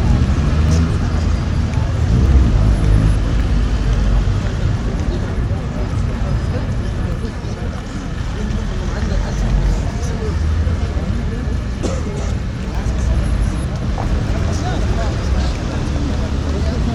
Spielbudenplatz, tankstelle, der arme teich
der arme teich, ein kleines Bassein mit Wasser, gelegen an der Reeperbahn in St. Pauli, dem Stadtviertel Hamburgs mit den wenigsten Grünflächen, stellte eine Ausnahmeerscheinung in der Reeperbahn dar. Das Bassein war von ein paar Koniferen umgeben und durch einen hohen Zaun vor dem Betreten abgesichert. Im Zuge des Umbaus des Tigerimbisses verschwand das Wasserbecken 2006. Die Aufnahmen stammen aus dem Jahr 2004 und wurden mit einem Hydrofon (Unterwassermikrofon) und einem Originalkopfmikrofon gemacht. Das Soundscape bildet zuerst den Sound unter Wasser ab und wechselt dann zu dem Geschehen über Wasser.
Hamburg, Germany, December 2004